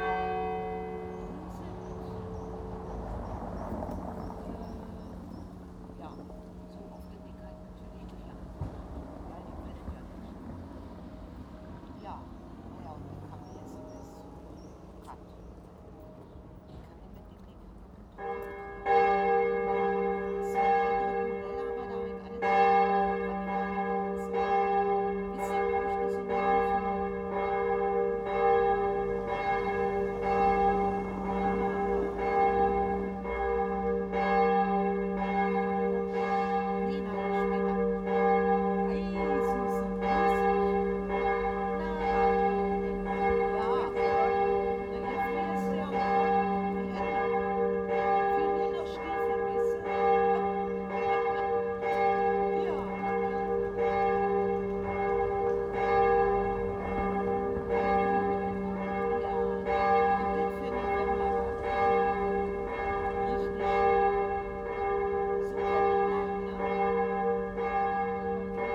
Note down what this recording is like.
Listening to the midday bells as conversations and all else goes by.